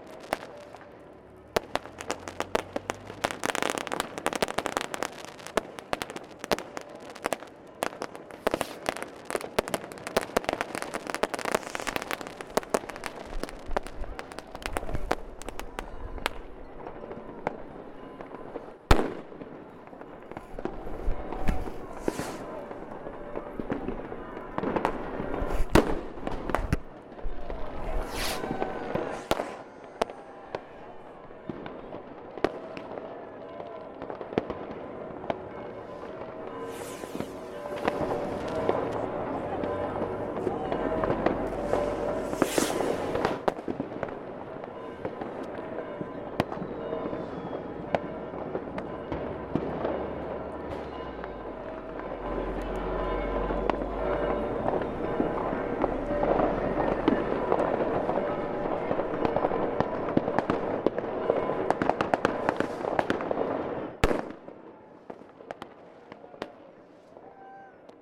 Moabit, Berlin, Germany - Silvester 2012
The bridge was filled with people who gathered together to start their firework to greet the new year 2013. To protect the recording device 'H1' from wind and very loud explosions i had to wrap it in felt. So, sound my be damped a little (more).